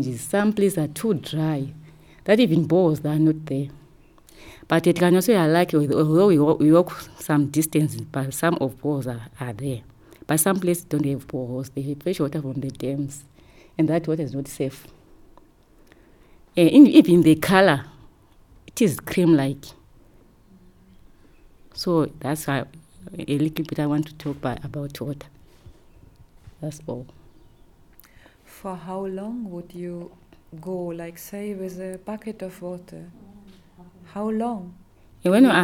Tusimpe Pastoral Centre, Binga, Zimbabwe - water is an issue where i come from...
...we discover that the issue of getting water for the family will be an issue not easily understood by listeners from places where water flows continuously from taps... so we asked Lucia to try again, focusing just on the issue of water...
the workshop was convened by Zubo Trust
Zubo Trust is a women’s organization bringing women together for self-empowerment.
5 July 2016, 11:20